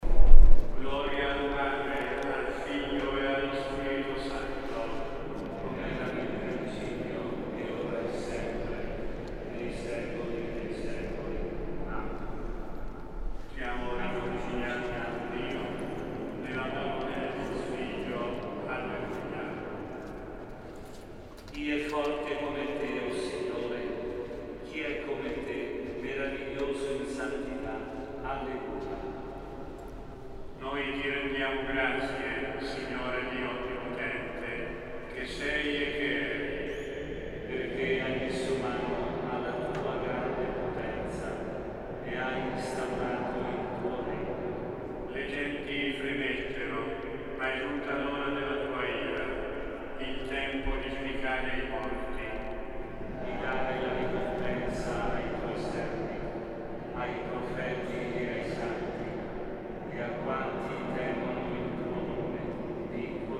Perugia, Italy - inside the church
a minute inside the church while the mess was going on. reverbs.